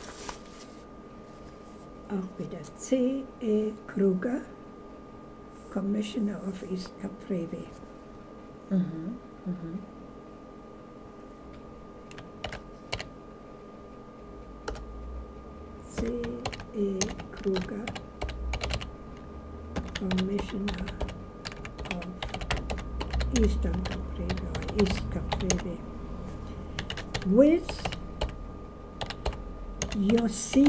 Office of Maria Fisch, Swakopmund, Namibia - A picture of Josiah Muhinda...
I’m with Maria Fisch in her small office full of books and papers helping her archiving some of her images from the Kavango on the computer. While doing so, I’m trying to squeeze as many stories as possible out of her; sometimes I’m successful… but Maria is a hard worker...
Maria Fisch spent 20 years in the Kavango area, first as a doctor then as ethnographer. She published many books on the history, culture and languages of the area.